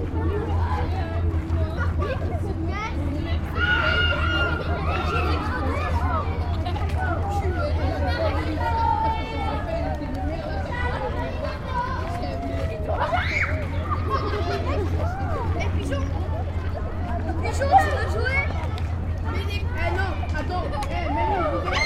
Place du Trocadéro, Paris, France - (360) Children playing and screaming
Recording from a bench in the park - contains mostly children's screams during playtime.
ORTF recording made with Sony D-100
24 September 2018, 13:04